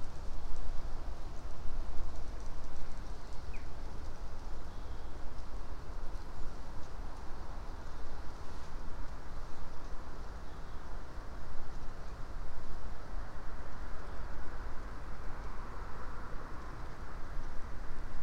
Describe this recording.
Tånga forest, Vårgårda. Recorded with Tascam DR-100 mk3 and primo EM-172 stereo pair.